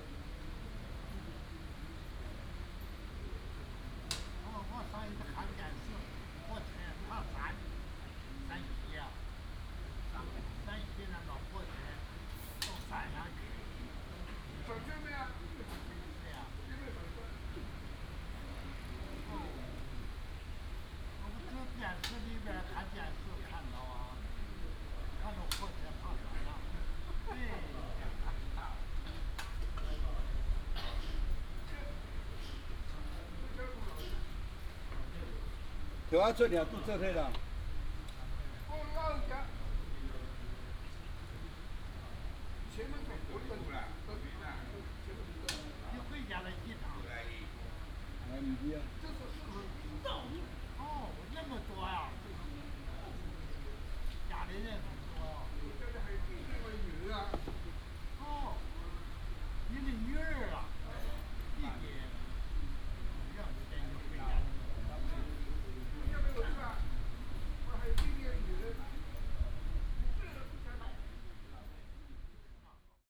In the square of the temple, Old man, Traffic sound, bird, Play chess and chat
Hsinchu County, Taiwan, 17 August